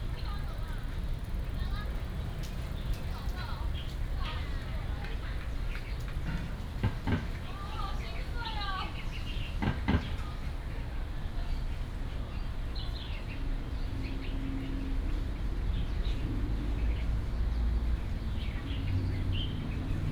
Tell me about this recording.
Chirp, Traffic Sound, In the university